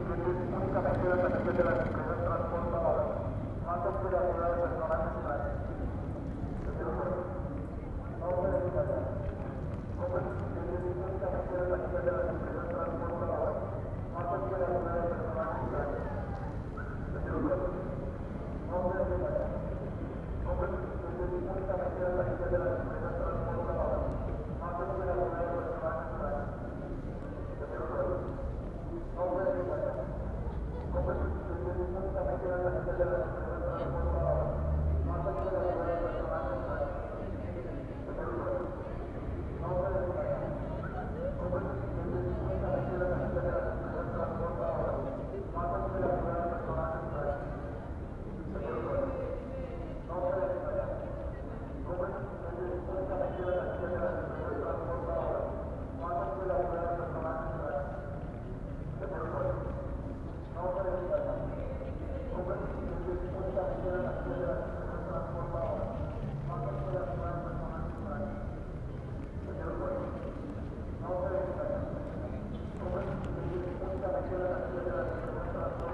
Ciudad Salitre, Bogotá, Colombia - Terminal de transporte Bogotá
Señor usuario no se deje engañar compre su tiquete......
12 May